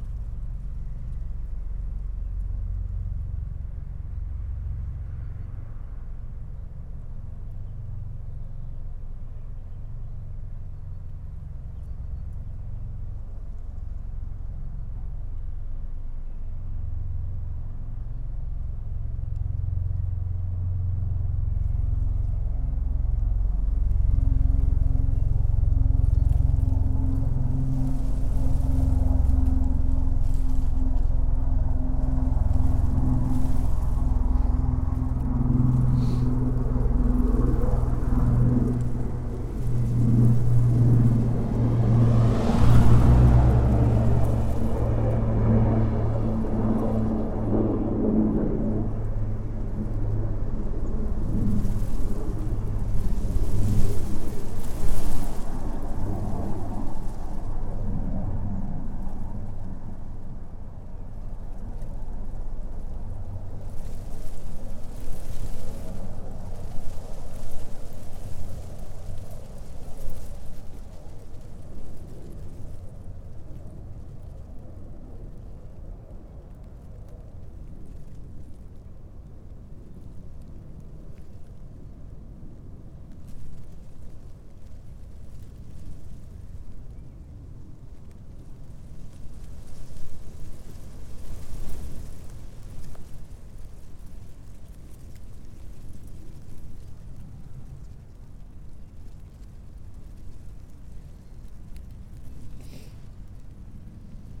Rue de l'Arnière, Orgerus, France - Wheat field still green, growing about 20 centimeters in April
The wind came from the west-south west.
The fields are still green and fresh.